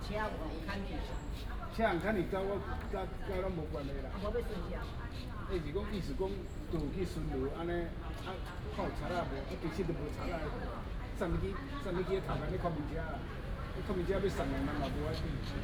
龍生公園, Da'an District, Taipei City - Children and family
Morning in the park, Children and family, The old woman in the park